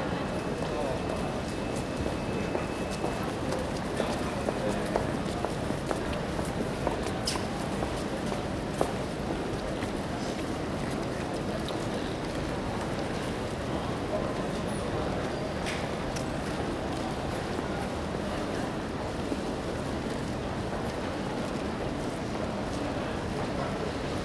Sentrum, Oslo [hatoriyumi] - Stazione C.le, passi e voci di viaggiatori
Stazione C.le, passi e voci di viaggiatori
Oslo, Norway, 24 April 2012